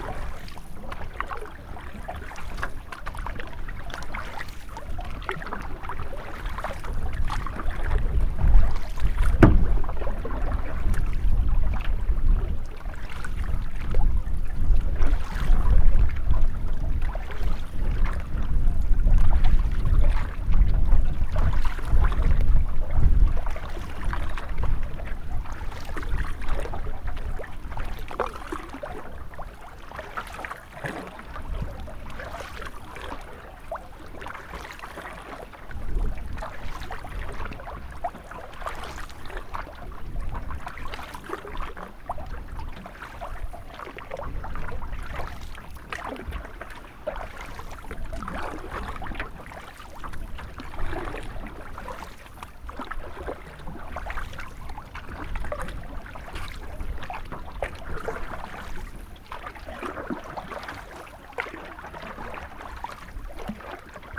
Stora Le, Årjäng, Sweden - Canoeing on a swedish lake, Midsommar
Canoeing around midsommar on a swedish lake, Olympus LS-14